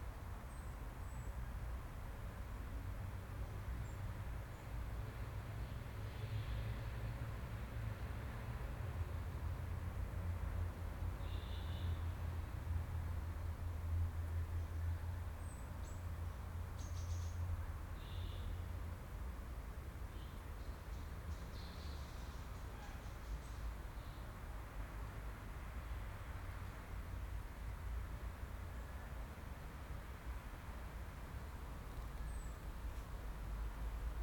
Dresdener Str. / Sebastianstr. - Luisengärten
overgrown garden between houses, seems that there are still remains of the former Berlin Wall, which used to run along this place